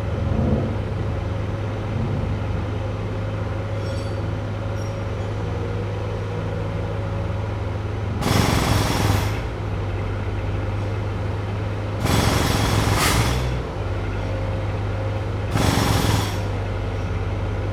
This is a snippet from a nearly hour-long recording of a work crew ripping up pavement right outside my apartment. This residential roadwork was done without notifying any of the residents of the apartment complex. Furthermore, some of the neighbors were angry because they didn't get a chance to move their cars before the work started and the dust and gravel was landing on their vehicles. The work started at around 8:00 in the morning and continued well into the PM. In this section of the recording you can hear jackhammers, trucks, car horns, and other sounds associated with roadwork and heavy machinery. Recorded with the Tascam DR-100MKiii and a custom-made wind reduction system.